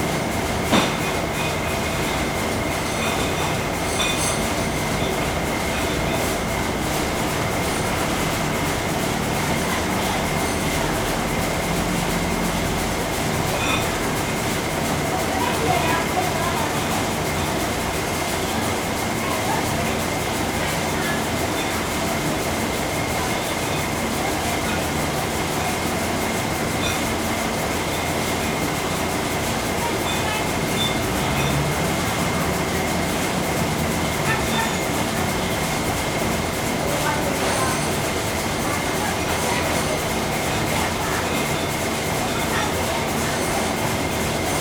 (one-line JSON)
{"title": "Ln., Huacheng Rd., Xinzhuang Dist., New Taipei City - Sound from Factory", "date": "2012-02-13 14:18:00", "description": "Sound from Factory\nZoom H4n +Rode NT4", "latitude": "25.06", "longitude": "121.47", "altitude": "12", "timezone": "Asia/Taipei"}